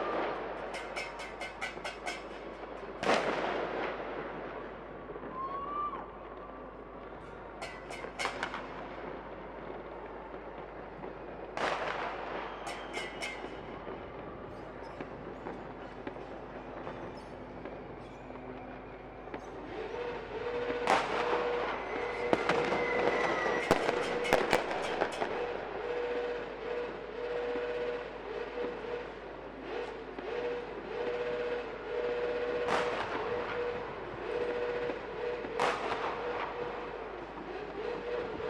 Lisbon, Portugal - New year 2016 celebrations
New year celebrations (2016), people shounting, kids yelling, motorcycle roaring, fireworks close and in the distance. Recorded in a MS stereo configuration (oktava MK012 cardioid mic + AKG CK94) into a Tascam Dr-70d.
1 January 2016